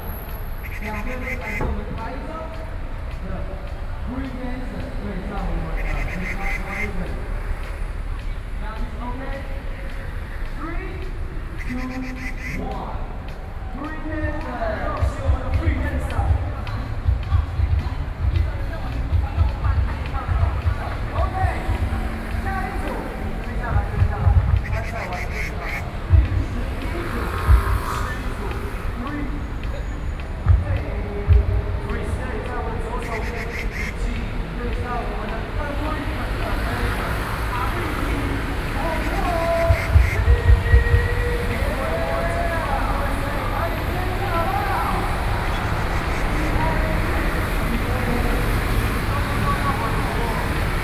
New Taipei City, Taiwan - Street dance competitions